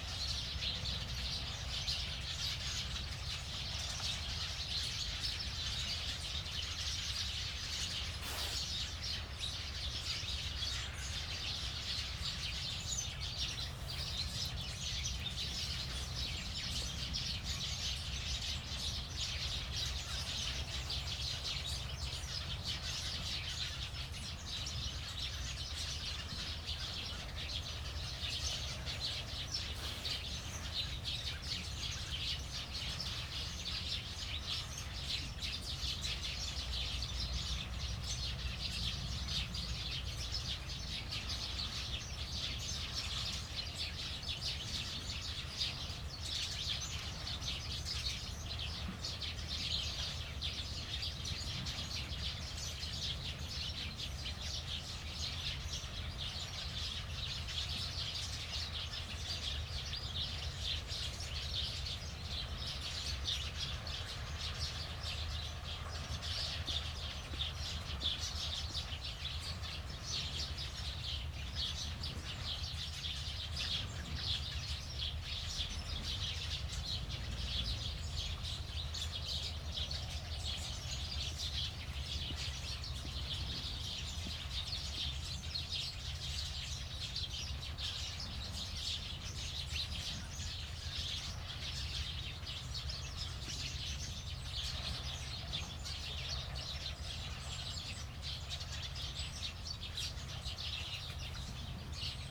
Autumn sparrows chatter in bushes near the fjord, Nørgårdvej, Struer, Denmark - Autumn sparrows chatter in bushes near the fjord
A grey late September day, with distant waves and digging machine.
28 September